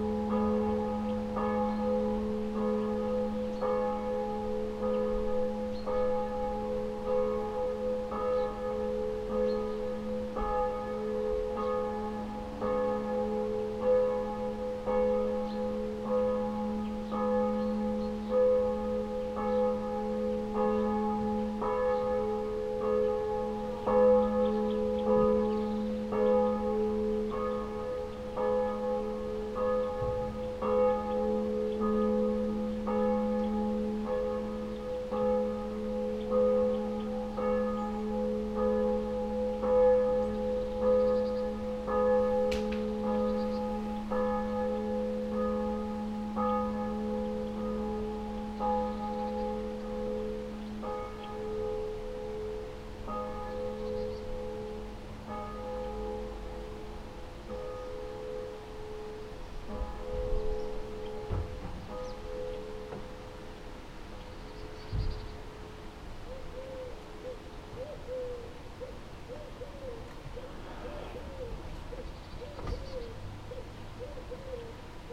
reichwalde, robert koch str, country camp for school pupils, morning bells
early in the mornig, the bells of the nearby church
soundmap d - social ambiences & topographic field recordings
17 September, 09:24